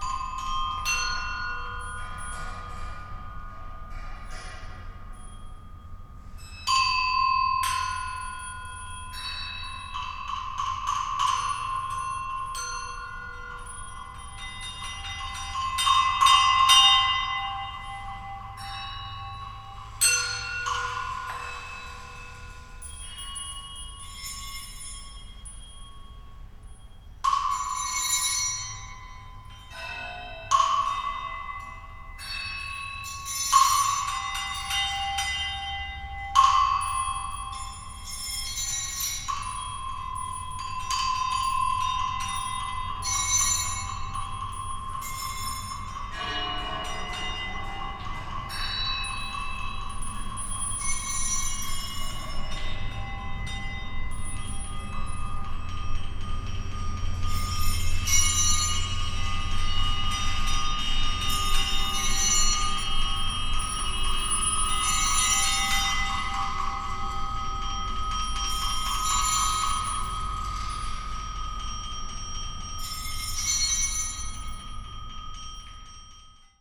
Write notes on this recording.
Site-specific performance in the tunnels below this square, made at the end of the 'Urban Sound Ecology' workshop organized by the Muzeum Sztuki of Lodz Poland